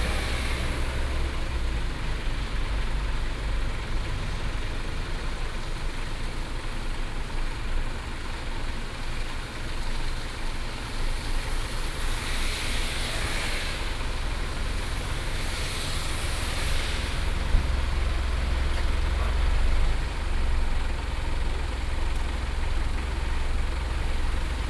全家便利商店鹿港鹿鼎店, Lukang Township - Outside the store
Outside the store, Road corner, Traffic sound, rain
Binaural recordings, Sony PCM D100+ Soundman OKM II